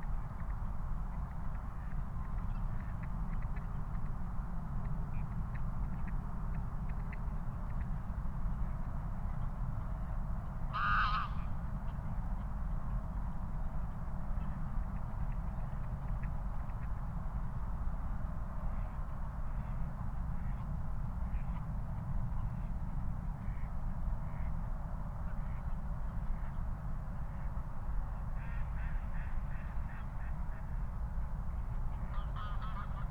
Moorlinse, Berlin Buch - near the pond, ambience
07:19 Moorlinse, Berlin Buch
Deutschland, December 2020